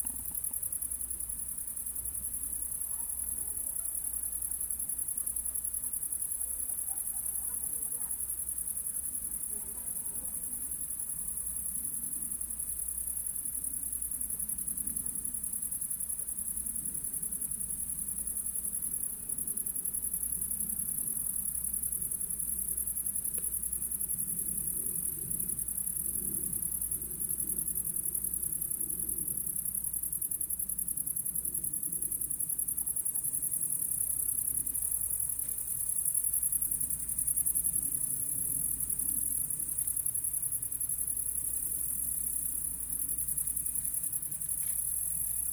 Oigny, France - Locusts
This evening we will sleep outside, in a hot pasture near an old church. Locusts and crickets are singing into the grass and the brambles.
29 July 2017, 21:00